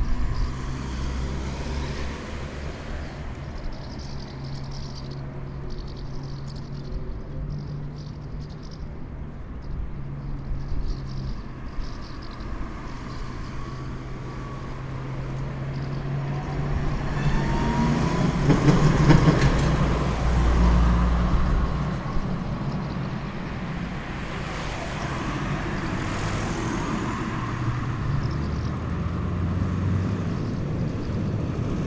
rašínovo nábřeží, House martins feeding
House martins feeding at the heavy trafic at Výtoň. Just the day of the 150th anniversary of birthday of Gustav Mahler.The Botič creek estuary is just few meters from here, and perhaps good resource of insect.